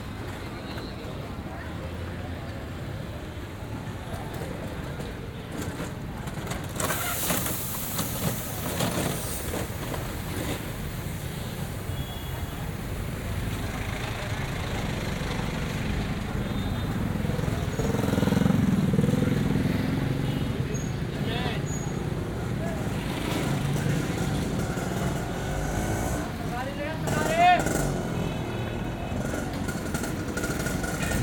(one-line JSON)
{"title": "Saddar Town, Karachi, Pakistan - Traffic zooming past Traffic (horns, small engines etc)", "date": "2015-10-08 08:37:00", "description": "Recorded by the roadside at rush hour. The traffic in Karachi is very dense, formed of cars, vans, motorbikes, rickshaws and carts pulled by animals.\nRecorded using a Zoom H4N", "latitude": "24.84", "longitude": "67.04", "altitude": "13", "timezone": "Asia/Karachi"}